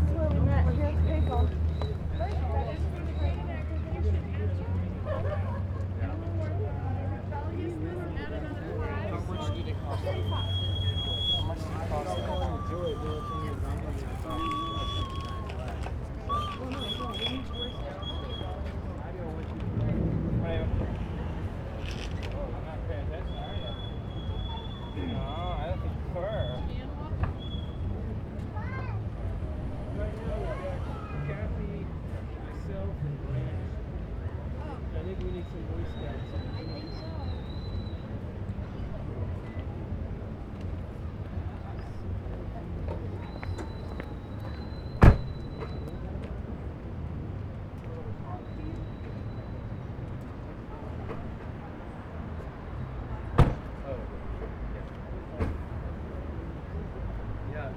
neoscenes: back to Courthouse Square